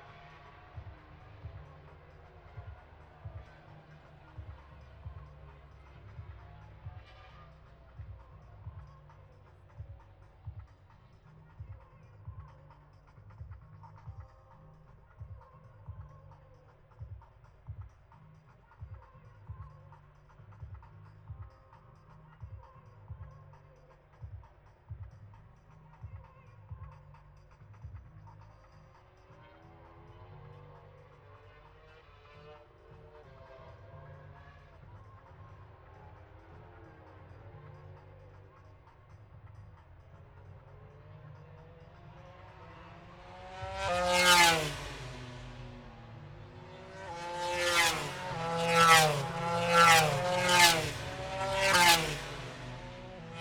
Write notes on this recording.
british motorcycle grand prix 2022 ... moto grand prix free practice three ... dpa 4060s on t bar on tripod to zoom f6 ... plus the disco ...